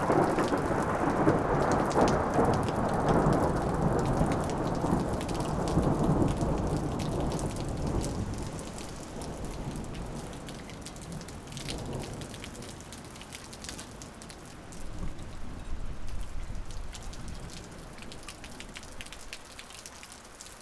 sudden thunderstorm on solstice evening, the drain can't take all the water
(Sony PCM D50 120°)